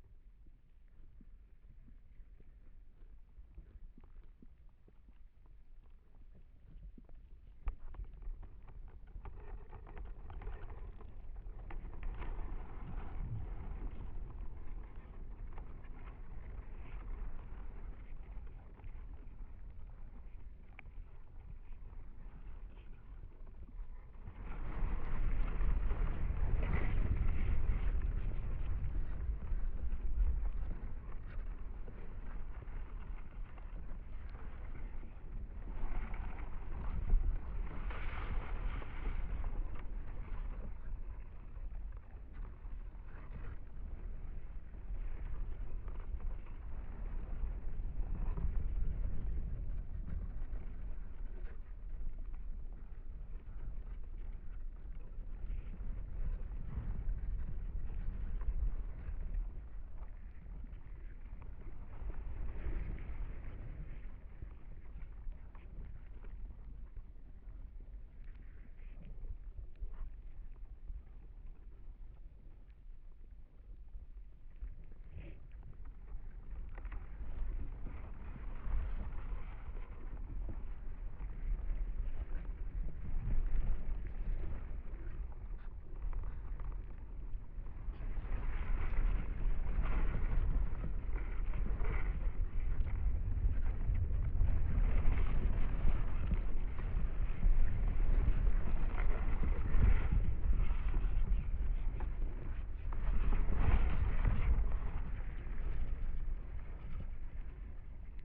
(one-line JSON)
{"title": "Kranenburgweg, Den Haag - hydrophone rec in the shore - wind moving the reeds", "date": "2009-05-10 18:42:00", "description": "Mic/Recorder: Aquarian H2A / Fostex FR-2LE", "latitude": "52.09", "longitude": "4.27", "altitude": "6", "timezone": "Europe/Berlin"}